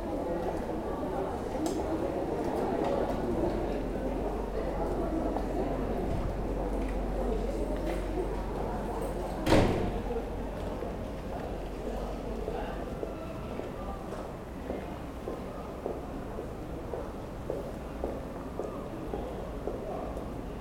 Leuven, Belgique - Old passers in the street
Old persons walking in a cobblestones street, distant sound of a quiet park.
Leuven, Belgium, 13 October 2018, 15:00